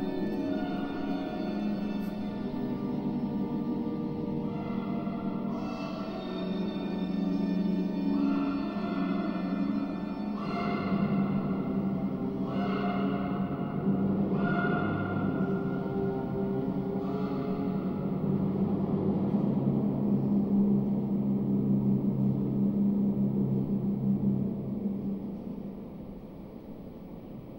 Dox, inside the installation
Recording from the exhibition Blood, Sweet, Tears by Douglas Gordon in DOX Center. Soundtrack of Bernard Hermann for the Hitchcock cult film Vertigo, mixed with regular call of crows in the TV monitors.